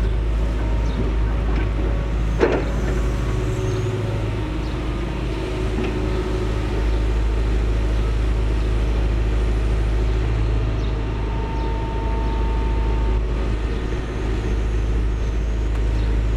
{"title": "berlin: manitiusstraße - the city, the country & me: construction site for a new supermarket", "date": "2012-04-26 12:58:00", "description": "excavator preparing the ground for a new supermarket\nthe city, the country & me: april 26, 2012", "latitude": "52.49", "longitude": "13.43", "altitude": "43", "timezone": "Europe/Berlin"}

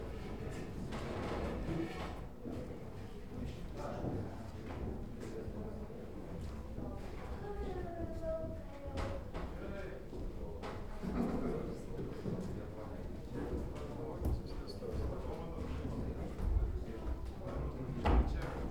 {"title": "Vyzuoneles, Lithuania, painters' plein air", "date": "2015-07-26 14:50:00", "description": "an opening of art plein air exhibition in the abandoned building of Vyzuoneles manor", "latitude": "55.53", "longitude": "25.56", "altitude": "101", "timezone": "Europe/Vilnius"}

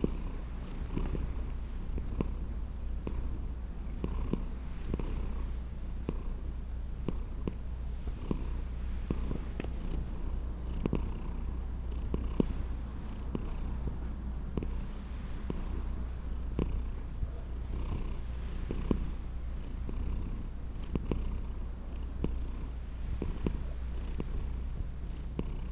{"title": "& Canyon Blvd, Boulder, CO, USA - Kitty Purring", "date": "2013-02-03 19:30:00", "description": "The sound of innocent happiness and fur.", "latitude": "40.02", "longitude": "-105.27", "altitude": "1619", "timezone": "America/Denver"}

